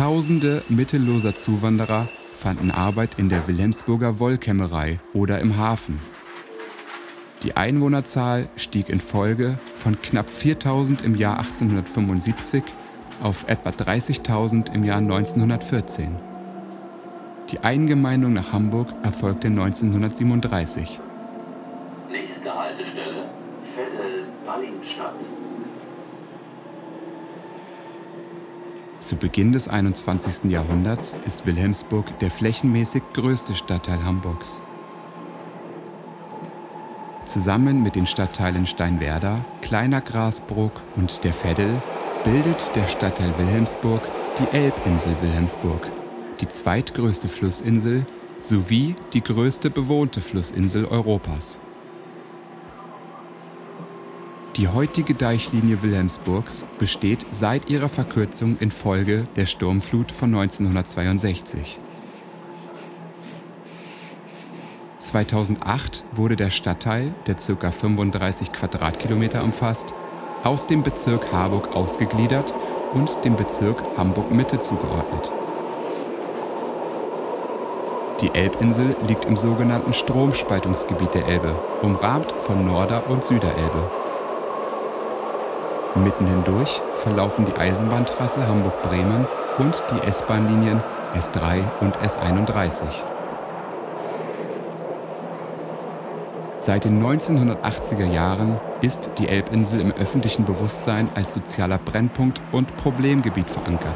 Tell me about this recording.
Fahrt der S-3 vom Hamburger Hauptbahnhof nach Wilhelmsburg sowie Exkurs Wilhelmsburg.